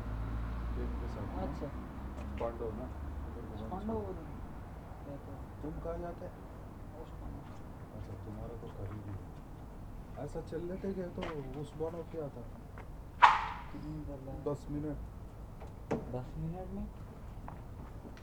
Berlin: Vermessungspunkt Friedelstraße / Maybachufer - Klangvermessung Kreuzkölln ::: 03.06.2011 ::: 01:51